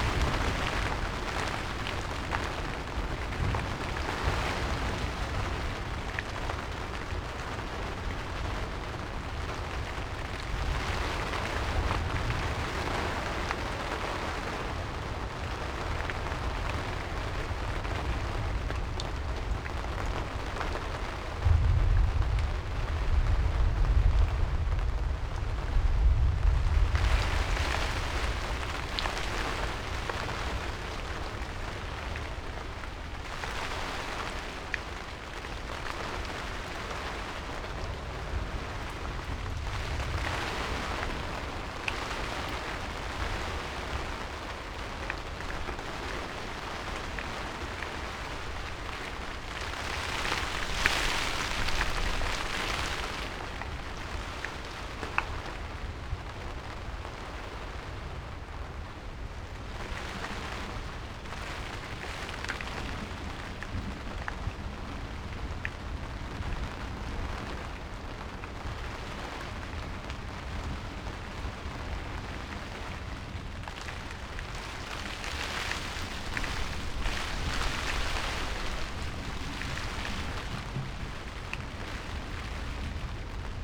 Chapel Fields, Helperthorpe, Malton, UK - inside poly tunnel ... outside thunderstorm ...
inside poly tunnel ... outside thunderstorm ... mics through pre-amp in SASS ... background noise ...